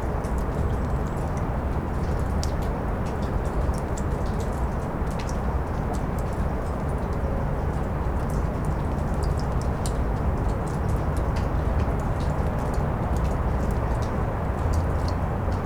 Lithuania, Vilnius, under the tribune
dropping water in the cacophony of cityscape
Vilnius district municipality, Lithuania, 6 November 2012